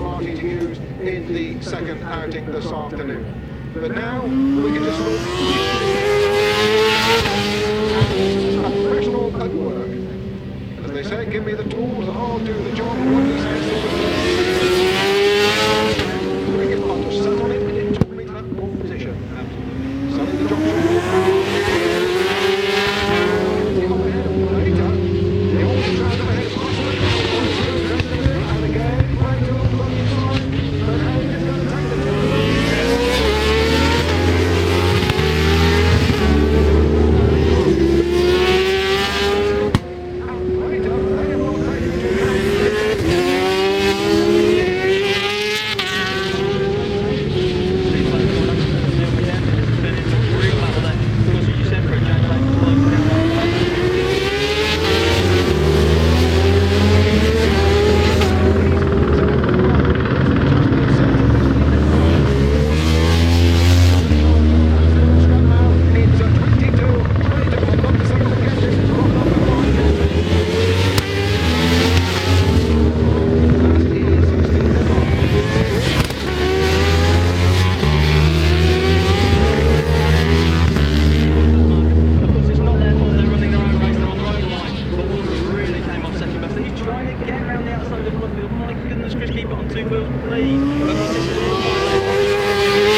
Silverstone Circuit, Towcester, United Kingdom - British Superbikes 2000 ... race one ...
British Superbikes 2000 ... race one ... one point stereo mic to minidisk ...